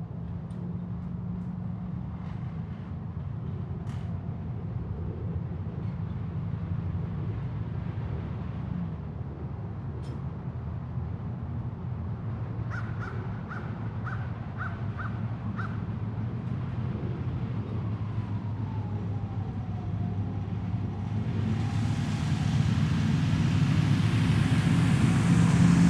Colorado Springs, CO, USA - Blizzard, Sirens & Footsteps
Recorded with a pair of DPA 4060s and a Marantz PMD661.